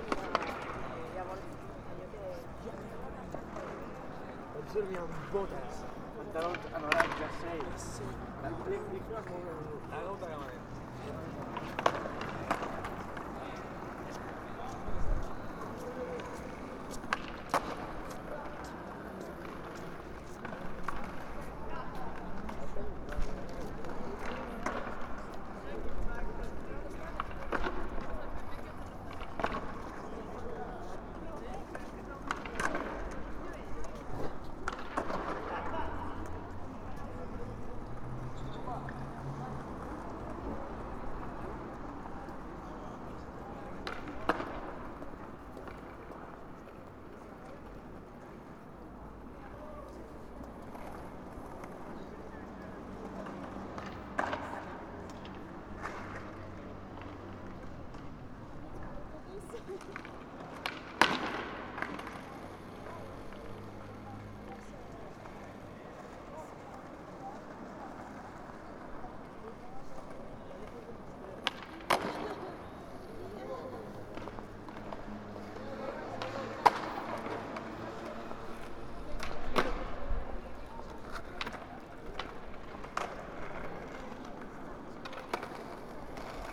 Plaça dels angels, MACBA
In front of the contemporary art museum, where skaters enjoy at all times of their own art.